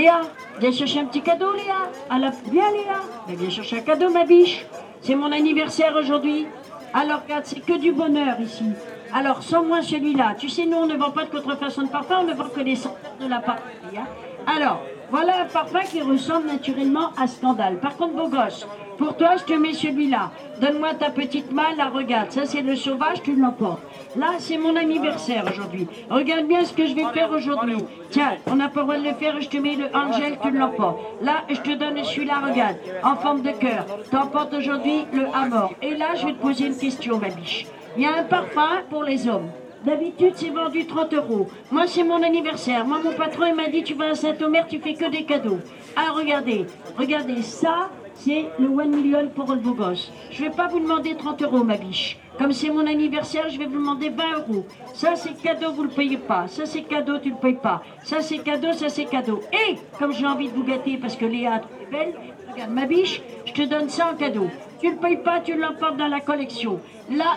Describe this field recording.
St-Omer, Ambiance du marché, La vendeuse de parfums (et ses nombreux cadeaux...)